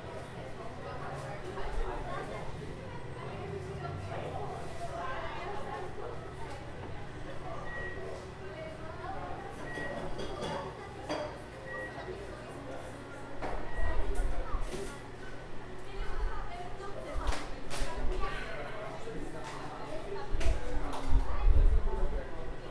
Av. Paulista - Bela Vista, São Paulo - SP, 01310-200, Brazil - Starbucks Coffee - São Paulo
Gravação ambiente do Starbucks da Avenida Paulista numa manhã de dia de semana.
Gravação feita por: Luca, Luccas, Bianca e Rafael
Aparelho usado: Tascam DR-40